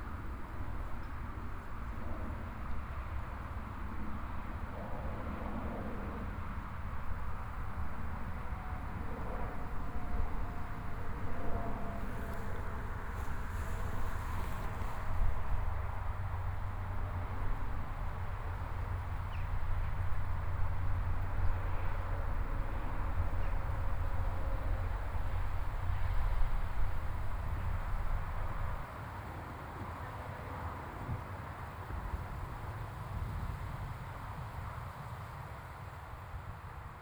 ruis van de A44
background noise of the highway